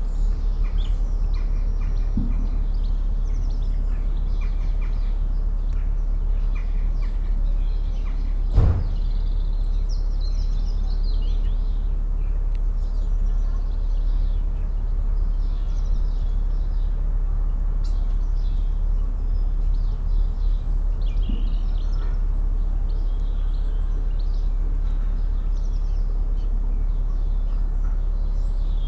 inside church porch ... outside church yard ... All Saints Church ... Kirkbymoorside ... lavalier mics clipped to sandwich box ... bird calls ... song ... from ... dunnock ... goldfinch ... house sparrow ... blue tit ... robin ... jackdaw ... collared dove ... wood pigeon ... carrion crow ... background noise ...
York, UK